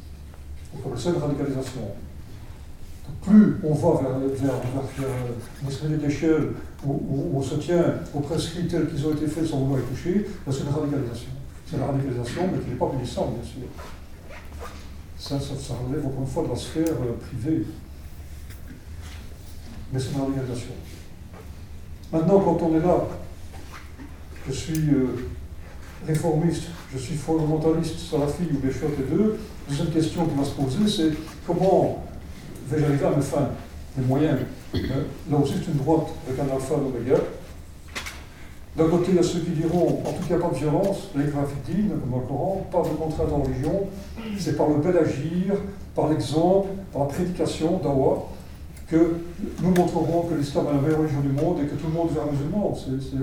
A conference about radicalism, made by Alain Grignard, an excellent islamologist. During a very too short hour, he explains how people could dive in a radicalism way of thinking. Recorded in the Governor's institution in Wavre.
Wavre, Belgium, January 2017